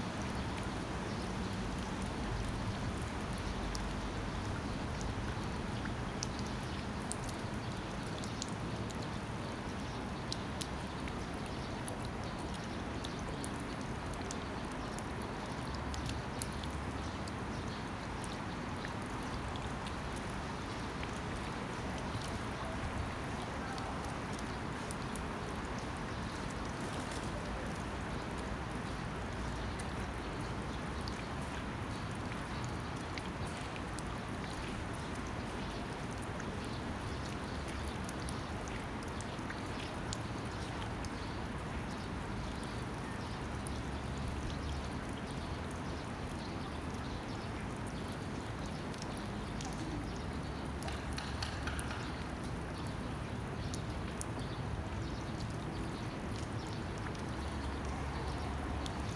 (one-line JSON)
{"title": "대한민국 서울특별시 서초구 양재동 양재천로 144 - Yangjaecheon, Summer, Rain Gutter, Cicada", "date": "2019-07-27 15:36:00", "description": "Yangjaecheon Stream, Summer, Rain Gutter, Cicada\n양재천, 여름, 빗물받이, 매미소리", "latitude": "37.48", "longitude": "127.04", "altitude": "30", "timezone": "Asia/Seoul"}